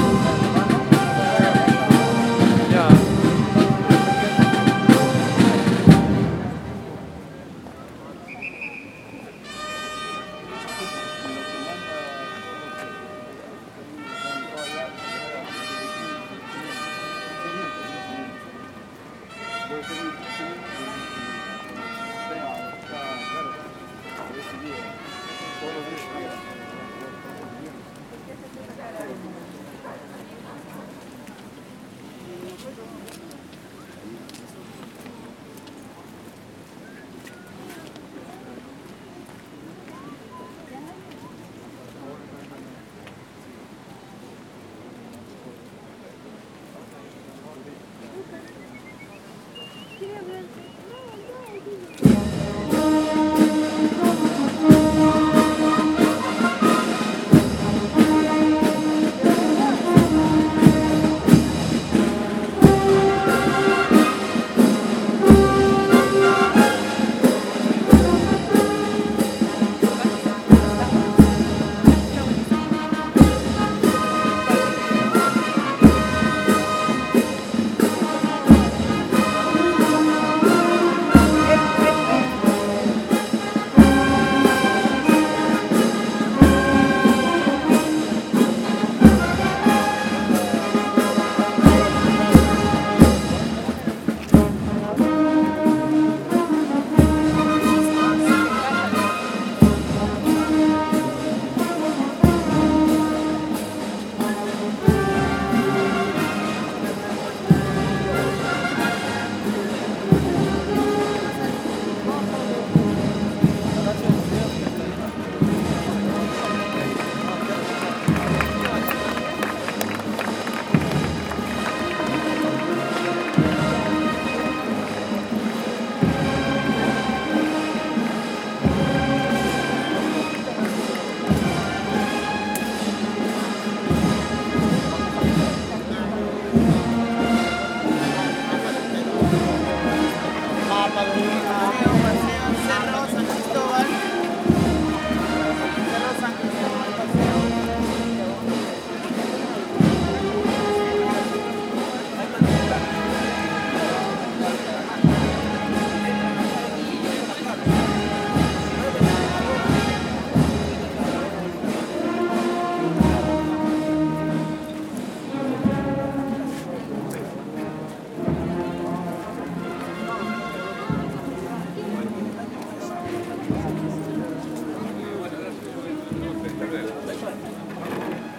Plaza de Armas de Lima, Perú ( main square)
in this square is located the palace of government, this place is a shift of the palace guard, accompanied by the band of the Hussars of Junin. The band improvises whith the condor pasa and Superman soundtrack present on this track. In front there is local public and tourists.
Lima District, Peru, 18 July 2011